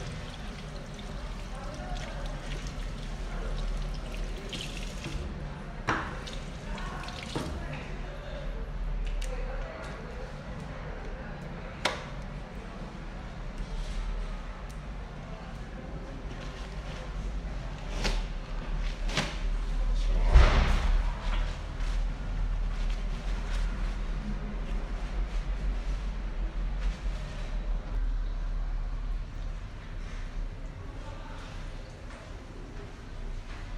Descripción
Sonido tónico: líquidos
Señal sonora: Puertas cerrándose, canillas, inodoro
Micrófono dinámico (Celular)
Altura 1.20 cm
Duración 3:13
Grabado por Luis Miguel Henao y Daniel Zuluaga Pérez
Región Andina, Colombia